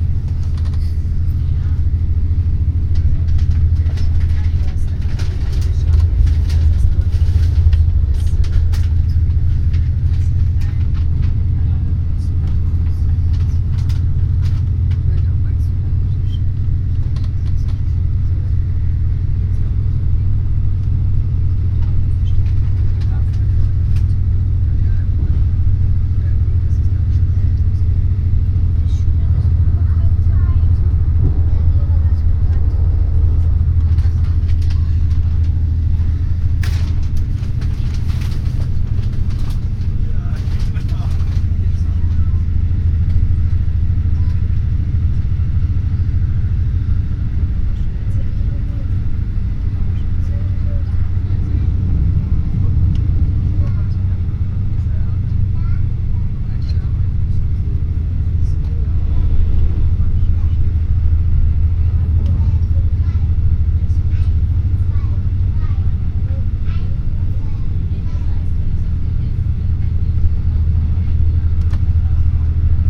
inside interregio train, next stop unna, afternoon, fahrgeräusche, stimmen der reisenden, bahnhofsansage des zugbegleiters
soundmap nrw:
social ambiences, topographic field recordings